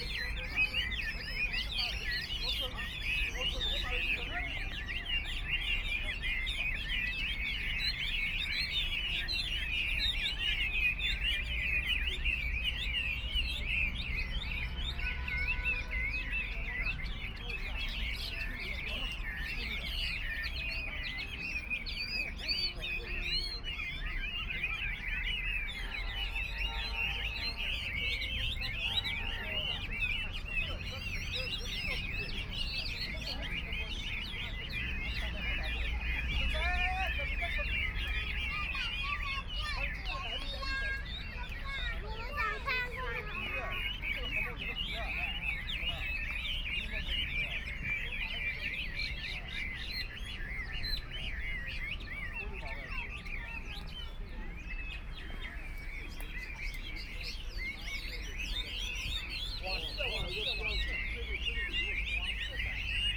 A lot of people put the cage hanging from a tree, Birdcage birds chirping, Binaural recording, Zoom H6+ Soundman OKM II